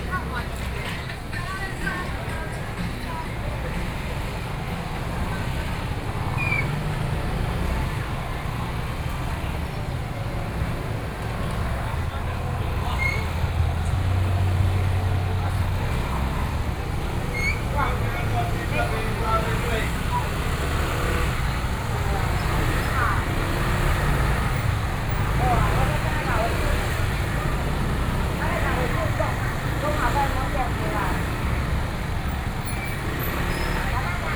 walking in the traditional market, Traffic Sound